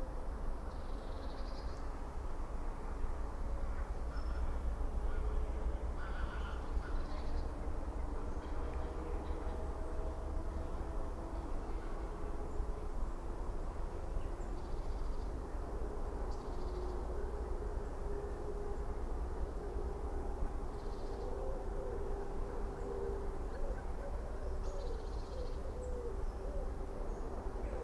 Kasteelheuvel, Bronkhorst, Netherlands - Kasteelheuvel, Bronkhorst
Birds, road and river traffic in distance.
Soundfield Microphone, Stereo decode.